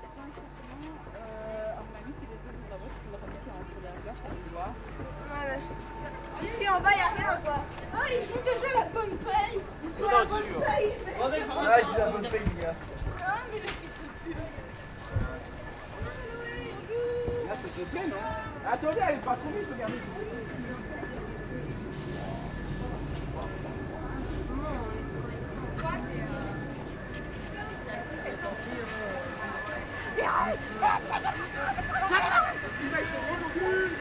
Mons, Belgium - K8strax race - Leaving the Mons station
After an exhausting race, our scouts are leaving us and going back home, sometimes very far (the most is 3 hours by train, the normal path is 1h30). Here, the 1250 scouts from Ottignies and near, are leaving using the train we ordered for us. Everybody is joking, playing with water in the wc, and activating the alarm system. Hüüh ! Stop boys ! At the end, train is leaving. At 10:47 mn, we can here the desperate station master saying : They are gone !!!
22 October 2017, 12:30